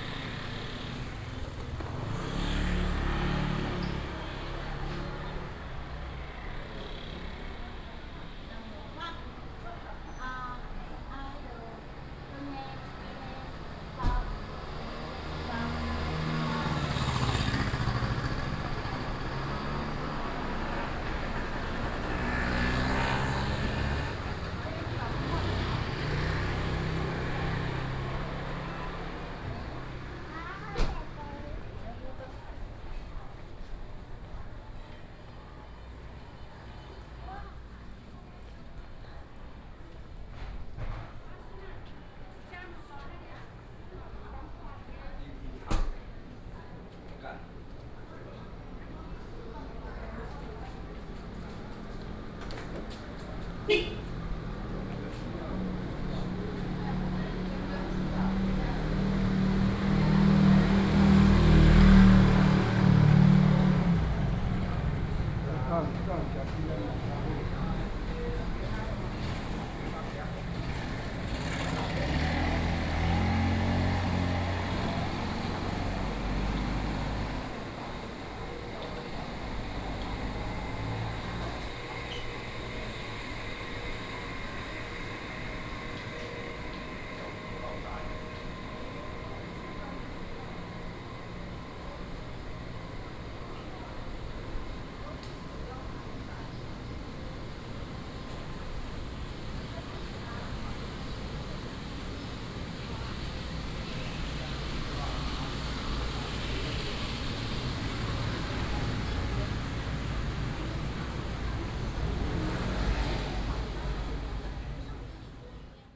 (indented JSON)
{
  "title": "Ln., Siwei Rd., Banqiao Dist., New Taipei City - Closing time",
  "date": "2015-07-29 16:02:00",
  "description": "Walking through the market, Closing time, Traffic Sound",
  "latitude": "25.03",
  "longitude": "121.46",
  "altitude": "16",
  "timezone": "Asia/Taipei"
}